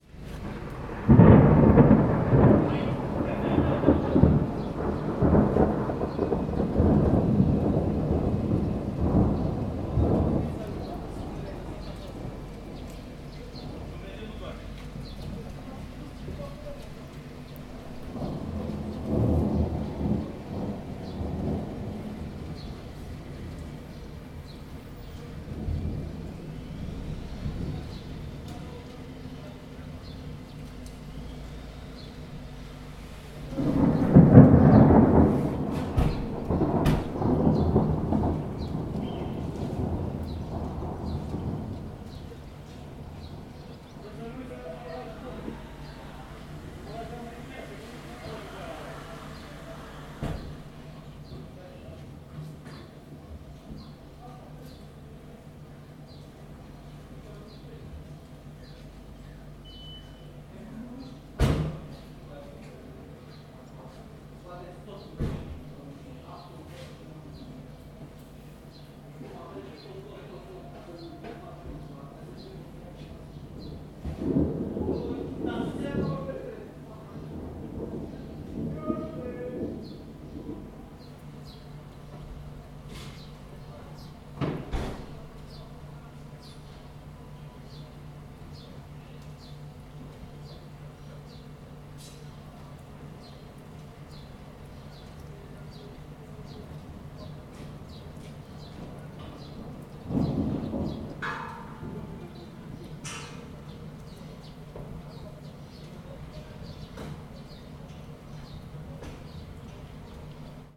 Cloudy summer day in Buftea, inside Mediapro film studios.
Buftea, Romania, 18 July, ~18:00